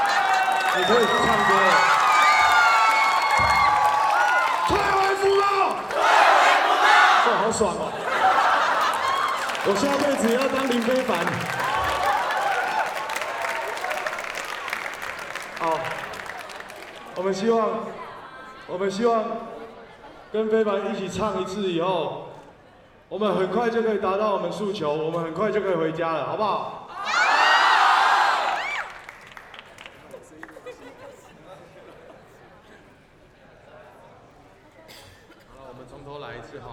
Student activism, Rock Band songs for the student activism, Students and the public to participate live recordings, People and students occupied the Legislative Yuan
Zoom H6+ Rode NT4